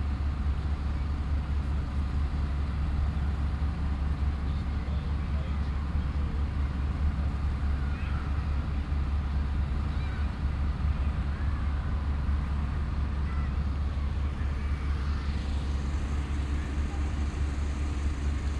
cologne, stadtgarten, schreddermaschine am weg
schreddermaschine des grünflächen amtes entsorgt äste - zu beginn im hintergrund kirchglocken der christus kirche
stereofeldaufnahmen im mai 08 - morgens
project: klang raum garten/ sound in public spaces - outdoor nearfield recordings